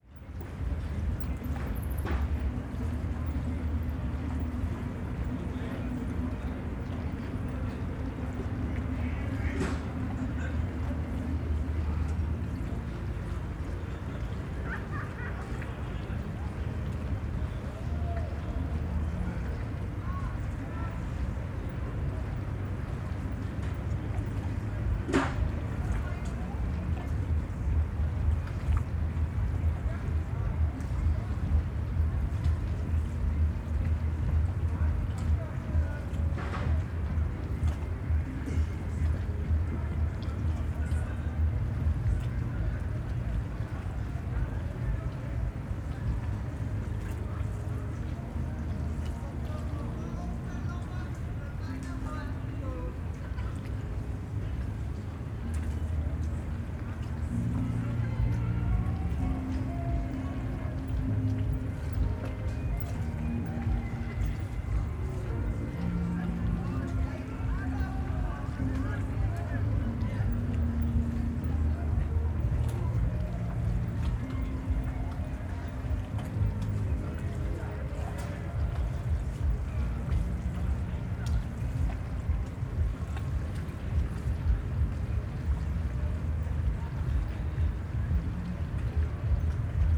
Insel der Jugend, Berlin, Deutschland - at the river Spree, weekend city hum
at the river Spree bank, Insel der Jugend (youth island). city hum, party boats, light waves. a typical summer weekend ambience river side. actually not very pleasant.
(Sony PCM D50, DPA4060)
18 July 2015, 22:40